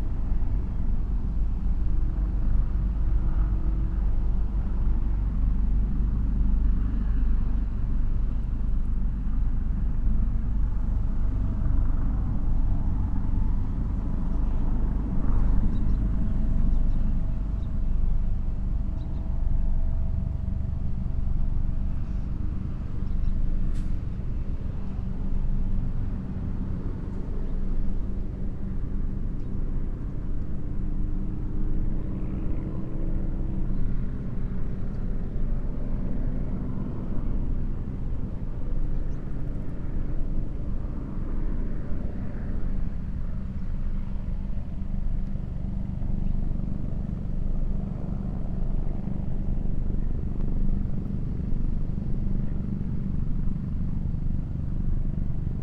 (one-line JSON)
{"title": "Lake Biwa Shoreline, Kusatsu-shi, Shiga-ken, Japan - Helicopters", "date": "2018-08-11 10:45:00", "description": "Soundscape dominated by three helicopters circling overhead and to the southwest of the Lake Biwa shoreline in Kusatsu. The helicopters appeared to be assisting in a police investigation. Audio was captured by a Sony PCM-M10 recorder and two Micbooster Clippy omnidirectional mics attached to a bicycle handelbar bag for a quasi-binaural sound image.", "latitude": "35.06", "longitude": "135.93", "altitude": "85", "timezone": "GMT+1"}